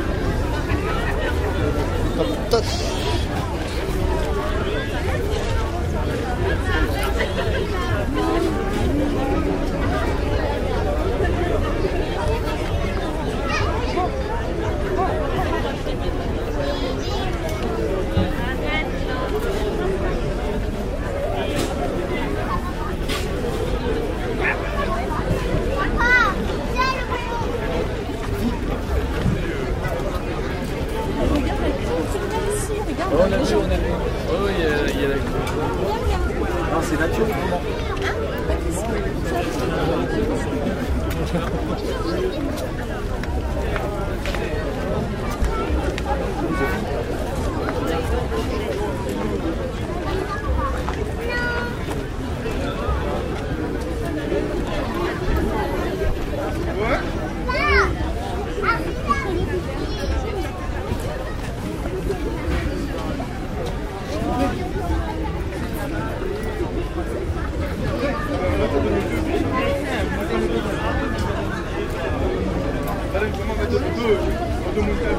marché de nuit lors de la commémoration de lentrée dans le patrimoine de lUNESCO